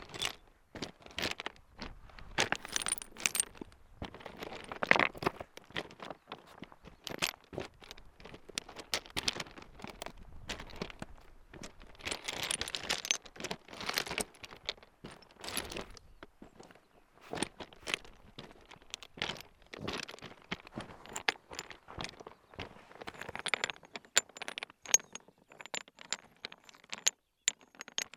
Gatuzières, France - The causse stones
The stones in this very desertic place are special. As I said the the Lozere mounts, where stones creechs, you won't find this elsewhere. In fact here in this huge limestone land, the stones sing. It makes a sound like a piano, with shrill sounds and acidulous music note. That's what I wanted to show with stones here and that's not very easy. These stones are called "lauzes".